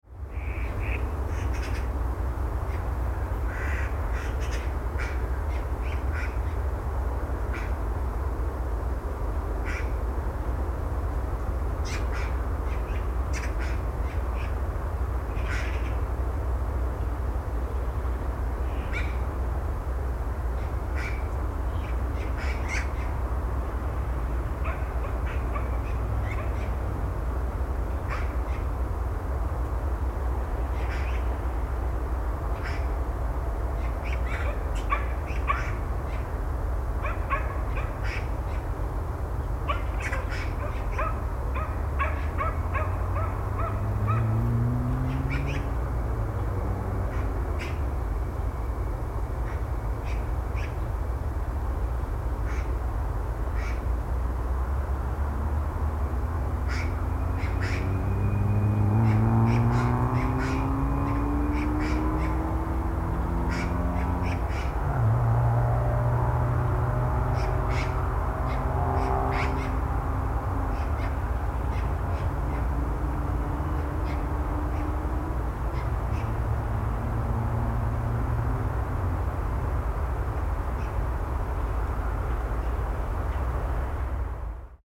{"title": "magpies in the city, Severodvinsk, Russia - magpies in the city", "date": "2013-11-24 11:10:00", "description": "magpies in the city\nсороки в городе", "latitude": "64.55", "longitude": "39.79", "altitude": "7", "timezone": "Europe/Moscow"}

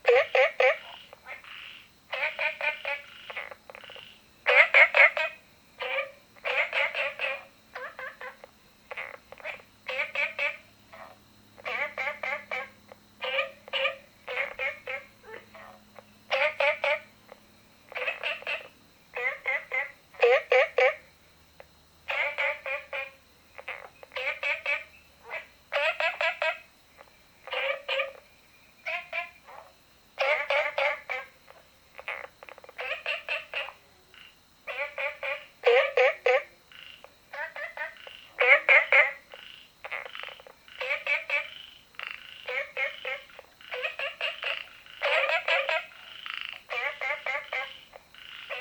{"title": "綠屋民宿, Puli Township - Ecological pool", "date": "2015-06-09 19:49:00", "description": "Frogs chirping\nZoom H2n MS+XY", "latitude": "23.94", "longitude": "120.92", "altitude": "495", "timezone": "Asia/Taipei"}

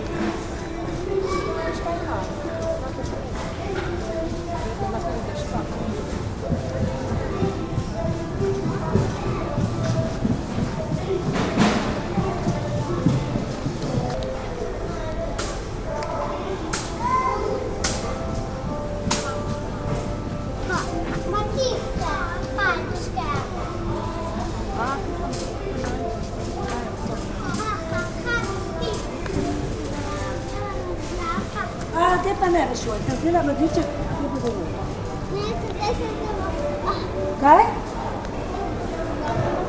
{"title": "Nova Gorica, Slovenija, Kulandija - Tapremajhne", "date": "2017-06-08 16:32:00", "latitude": "45.95", "longitude": "13.66", "altitude": "102", "timezone": "Europe/Ljubljana"}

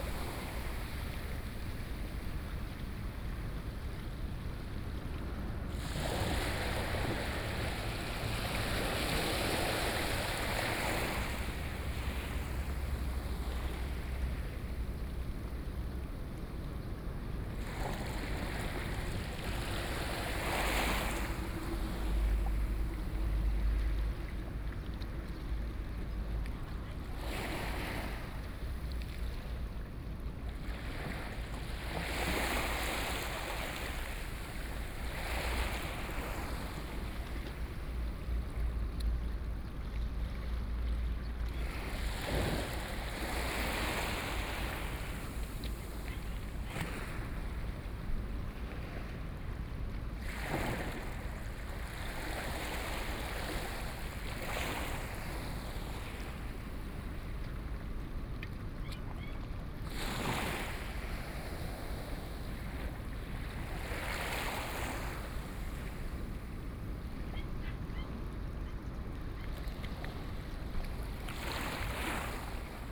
{"title": "頭城鎮大里里, Yilan County - Sound of the waves", "date": "2014-07-21 16:42:00", "description": "Traffic Sound, Sound of the waves, The sound of a train traveling through, Very hot weather\nSony PCM D50+ Soundman OKM II", "latitude": "24.95", "longitude": "121.91", "altitude": "5", "timezone": "Asia/Taipei"}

